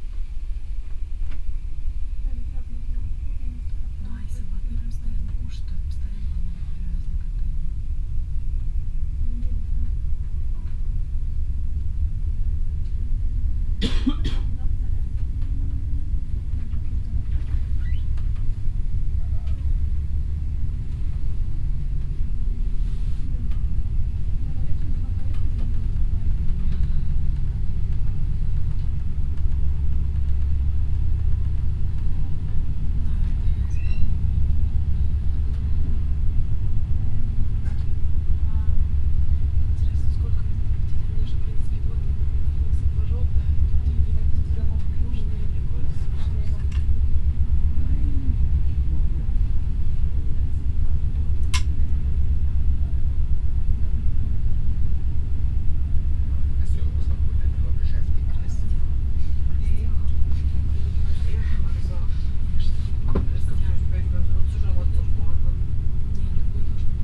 in regio train, next stop glaubitz
in a regio train - conversation of two russian women, anouncement of next stop glaubitz
soundmap d: social ambiences/ listen to the people in & outdoor topographic field recordings
Glaubitz, Germany, 18 June 2009, 11:12